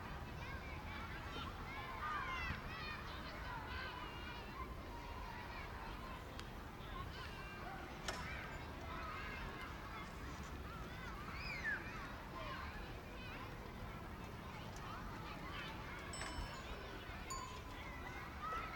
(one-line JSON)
{"title": "Oosterpark, Amsterdam, The Netherlands - Children playing in the swimming pool on a hot day", "date": "2013-07-18 14:00:00", "description": "The tower bell rings 2 a clock. The low boom of the tram trembles the hot air.\nCrows chatter in the trees surrounding the park and masking the city's noise.\nAfter a cold spring, summer has finally arrived. Small children enjoy the cool water\nand ice cream, in the first city park of Amsterdam. A nostalgic image of 'endless'\nvacations and hot summer days, recorded in the cool shadow of a music kiosk.\nSome equalisation and fades.", "latitude": "52.36", "longitude": "4.92", "altitude": "2", "timezone": "Europe/Amsterdam"}